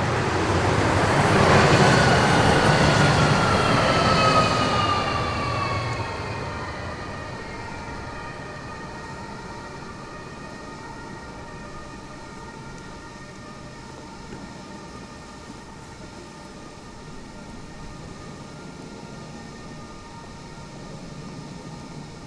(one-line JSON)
{"title": "zürich 5 - limmatstrasse, unter dem viadukt", "date": "2009-10-13 18:50:00", "description": "unter dem viadukt", "latitude": "47.39", "longitude": "8.53", "altitude": "409", "timezone": "Europe/Zurich"}